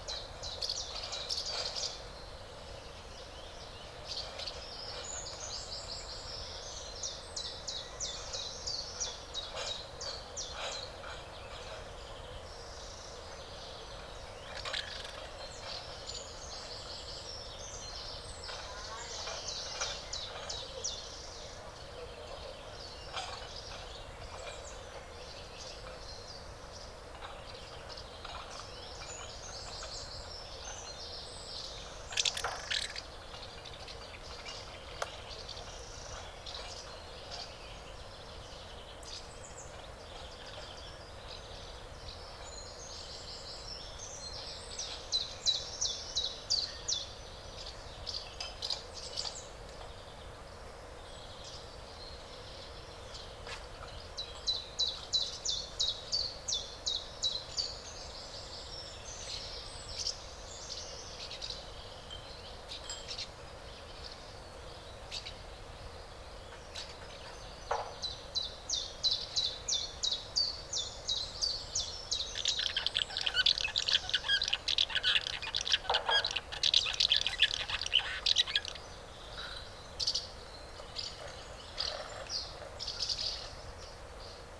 Im Dornbuschwald, Insel Hiddensee, Deutschland - Swallows nest
Swallow's nest, the adult birds feed the nestlings and other swallows fly curiously around the nest
Vorpommern-Rügen, Mecklenburg-Vorpommern, Deutschland